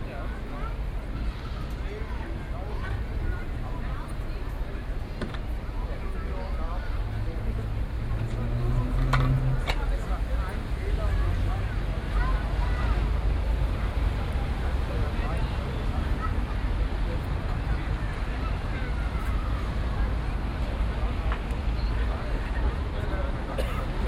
Löhrrondell, square, Koblenz, Deutschland - Löhrrondell 9
Binaural recording of the square. Second day, a saturday, ninth of several recordings to describe the square acoustically. On a bench, children's day, homeless people discussing.